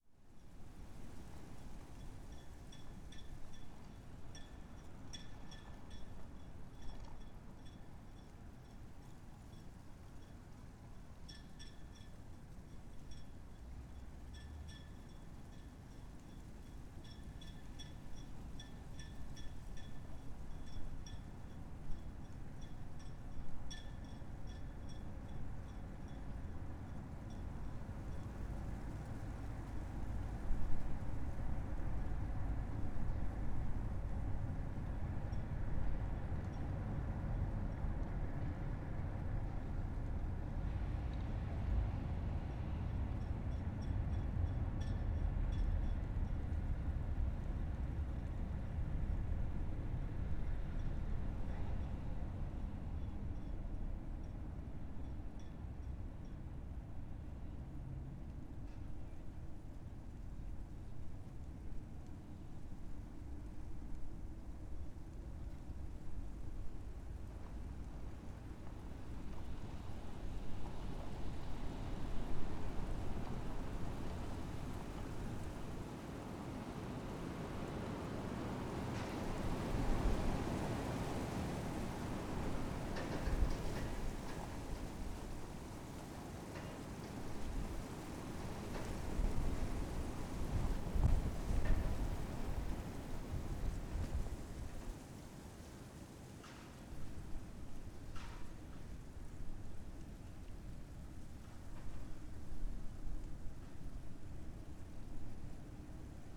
bad freienwalde/oder: uchtenhagenstraße - the city, the country & me: street ambience
stormy evening, mic in the window, street ambience, rustling leaves
the city, the country & me: january 2, 2015